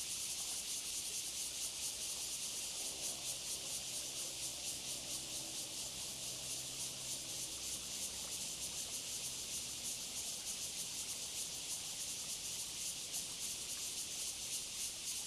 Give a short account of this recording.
Cicadas sound, Distant traffic sounds and, sound of the waves, Zoom H2n MS+ XY